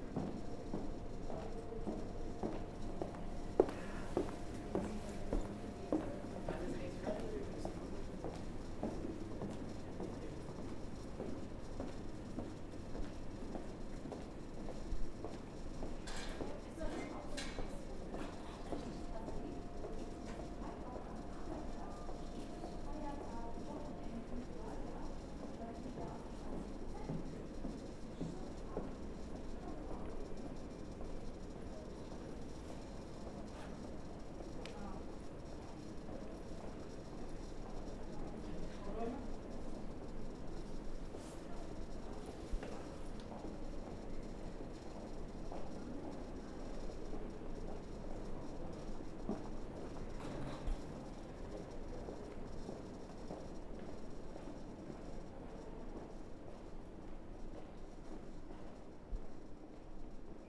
Another recording of this aisle, now some people are passing, some policeman passes by talking (what is he doing there, meaning me with the recording device), again the anouncement is made that people should not be in masses - which would have been a good reminder at the main station at this day but not here, in this very empty hall...